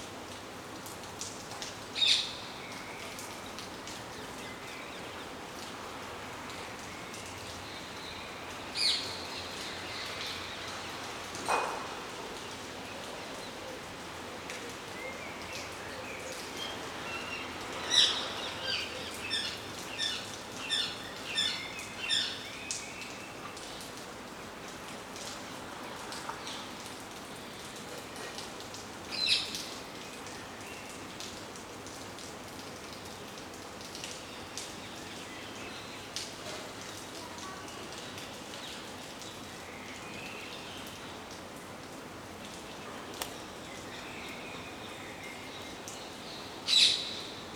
Rhein, starker Regen, Binnenschiff, Halsbandsittich, Urban
Baden-Württemberg, Deutschland, 8 June 2022